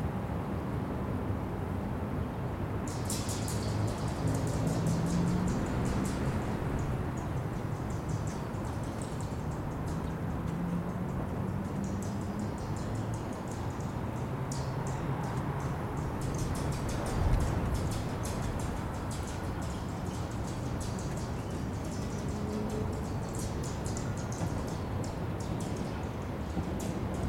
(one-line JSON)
{"title": "Narbonne (Central bus station), Narbonne, France - It happens when a train passes", "date": "2021-12-24 10:05:00", "description": "train, city noise in the background\nCaptation Zoom H4N", "latitude": "43.19", "longitude": "3.00", "altitude": "14", "timezone": "Europe/Paris"}